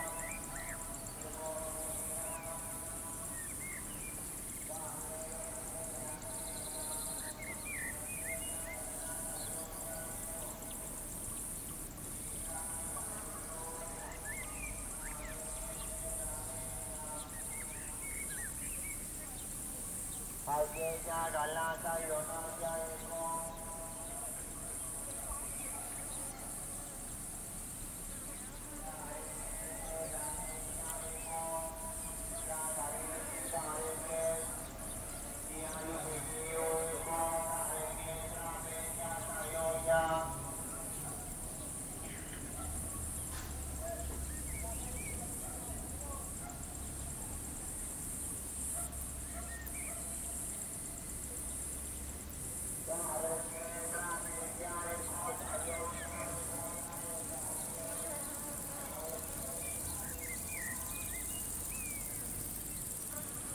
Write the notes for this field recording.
Ambience from Agora, Ancient Messene, with distant amplified voice echoing from the hills. Thanks to Tuned City